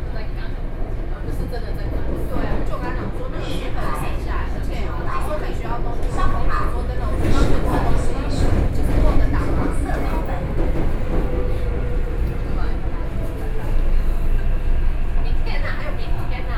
Beitou - in the MRT train
Beitou District, 西安街二段195號, 5 October 2012, 20:33